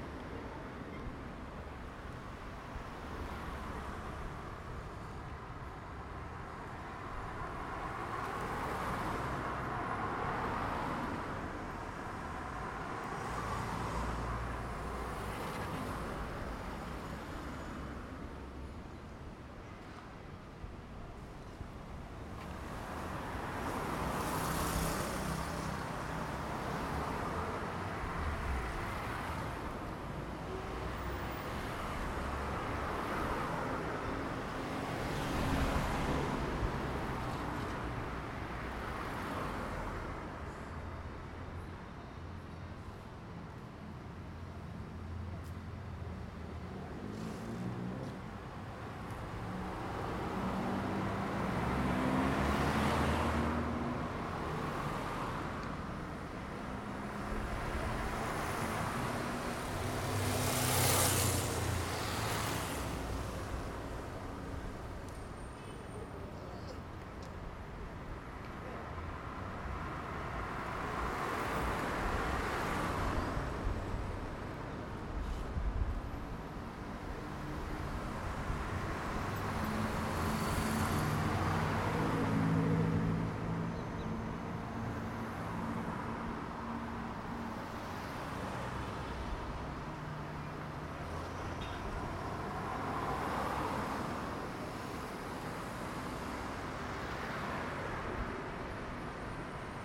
Av. Antônio Afonso de Lima - Vila Lima I, Arujá - SP, 07432-575, Brasil - avenida em Aruja
captação estéreo com microfones internos